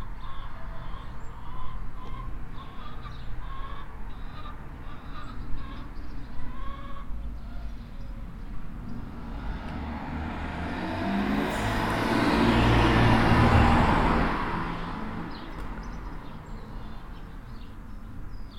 kehmen, ambience and church bell
Nearby the church on a sunday morning. Some hen cackle, a tractor passes by, a man loads some buckets on a wagon and the half past bell of the church.
Kehmen, Umgebung und Kirchenglocke
Neben der Kirche an einem Sonntagmorgen. Einige Hennen gackern, ein Traktor fährt vorbei, ein Mann lädt Eimer auf einen Wagen und die Kirchglocke läutet zur halben Stunde.
Kehmen, ambiance et cloche d'église
A proximité de l’église, un dimanche matin. Des poules caquètent, un tracteur passe, un homme charge des seaux sur un charriot et la cloche de l’église sonne la demie.
Project - Klangraum Our - topographic field recordings, sound objects and social ambiences